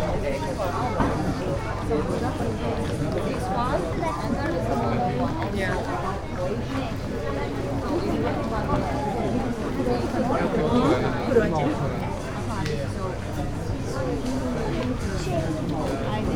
Euphrasian Basilica, Poreč, Croatia - saturday noon
languages, steps and other voices ...